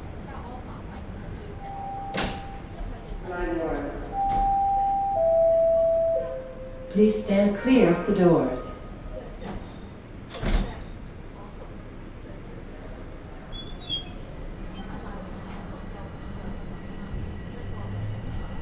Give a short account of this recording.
Driver's voice echoes as he announces delays on Toronto subway line.